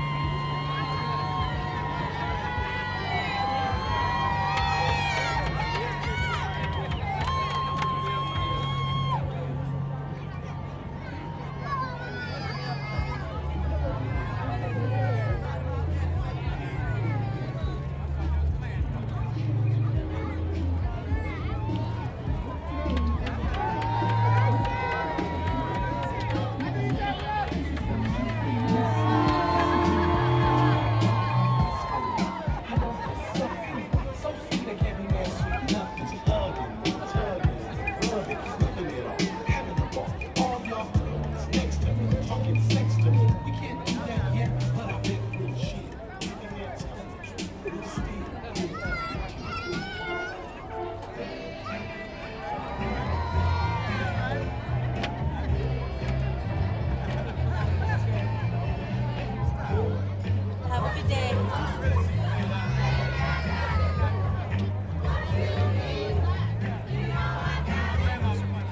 South Los Angeles, Los Angeles, Kalifornien, USA - martin luther king memorial parade
los angeles - martin luther king memorial parade at crenshaw / martin luther king jr, music and sounds from passing floats, yelling spectators, aound 12:30pm
Los Angeles, CA, USA, 20 January